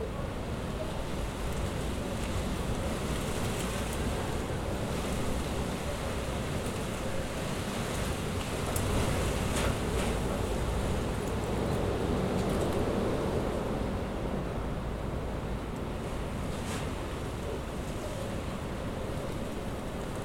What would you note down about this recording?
Strong gusts of wind interspersed with moments of calm as Storm Eunice passes over Kentish Town. Recorded with a Zoom H4-n